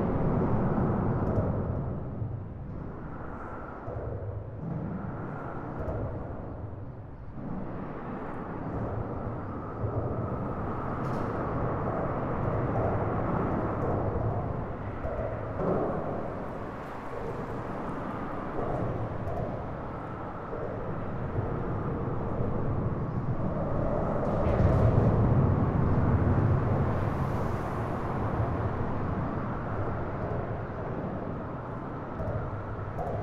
Los Duranes, Albuquerque, NM, USA - Gabaldon Underpass
Interstate 40 neighborhood freeway underpass. Recorded on Tascam DR-100MKII; Fade in/out 1 min Audacity, all other sound unedited.